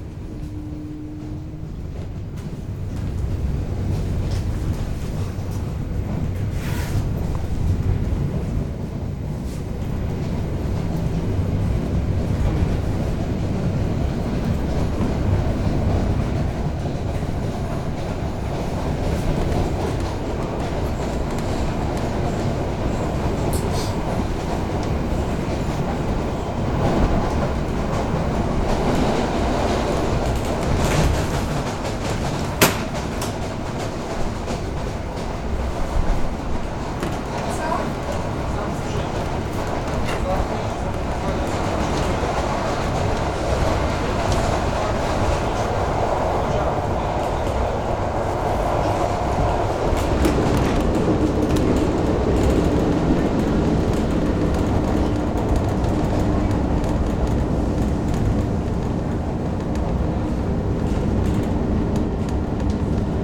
Olsztyn, Pociąg - Inside train passing through Olsztyn
Short trip from Central Station to West Station inside Olsztyn city.
Olsztyn, Poland, 10 November 2008